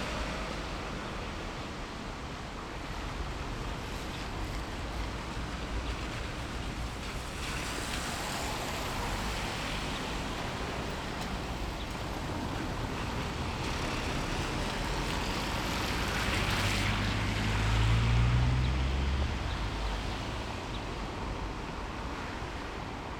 Berlin: Vermessungspunkt Maybachufer / Bürknerstraße - Klangvermessung Kreuzkölln ::: 14.08.2010 ::: 12:31
14 August 2010, ~13:00